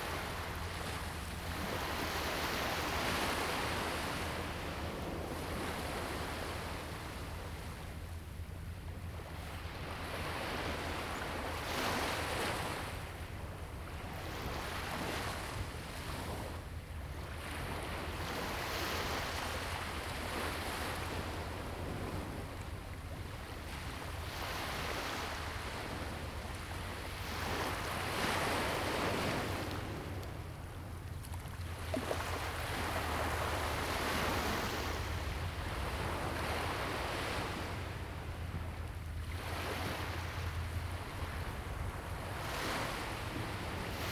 尖山村, Huxi Township - At the beach
At the beach, Sound of the waves
Zoom H2n MS +XY
Husi Township, 204縣道